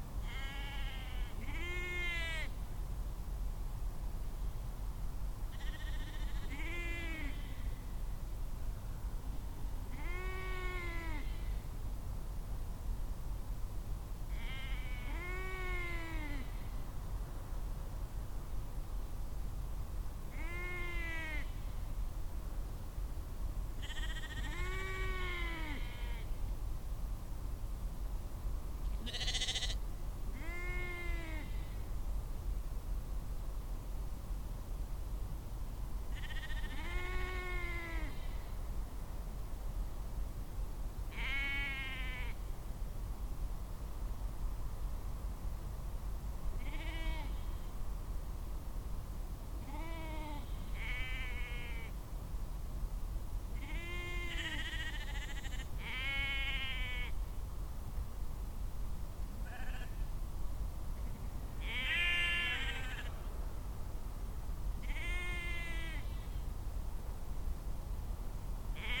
When I came home to Nortower Lodges, where I stayed in Shetland for Shetland Wool Week and for the North Atlantic Sheep and Wool conference, I heard a lot of baaing in the fields. I think this was the day when the ewes were separated from their ram lambs and the lambs were sent for meat. It was a lot of baaing, late into the night and I stood for a while and listened before heading to my bed.
Shetland Islands, UK